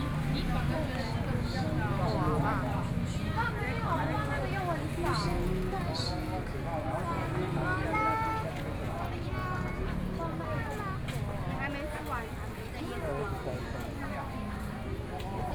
Hemu Rd., Yilan City - the Night Market
Walking through the Night Market, Traffic Sound, Tourist, Various shops voices
Sony PCM D50+ Soundman OKM II
Yilan County, Taiwan, 25 July 2014, 20:10